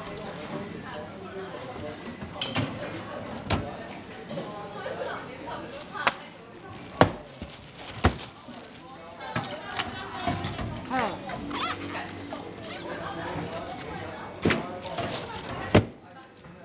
Qingzhing St. green bean soup慶忠街綠豆湯 - Greeting and lading out the green bean soup
Greeting and lading out the green bean soup in the store. 綠豆湯承裝與招呼聲
West Central District, Tainan City, Taiwan, 13 May 2014, 14:46